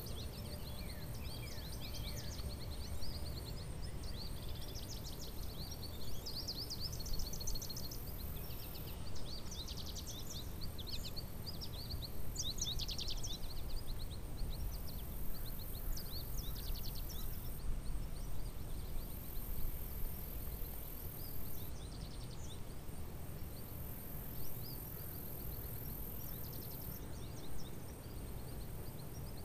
Beaver Pond, Kanata, Ontario, Canada
World Listening Day, WLD, Beaver Pond, South March Highlands, birds, crickets
18 July 2010